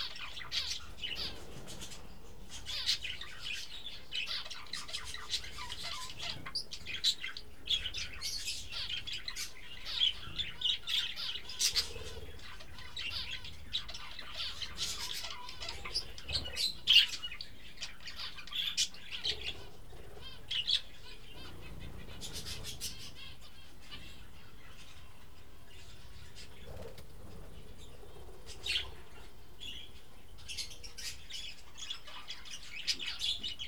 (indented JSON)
{
  "title": "Gruta, Lithuania",
  "date": "2015-09-11 19:30:00",
  "description": "little zoo's inhabitants, parrots",
  "latitude": "54.02",
  "longitude": "24.08",
  "altitude": "106",
  "timezone": "Europe/Vilnius"
}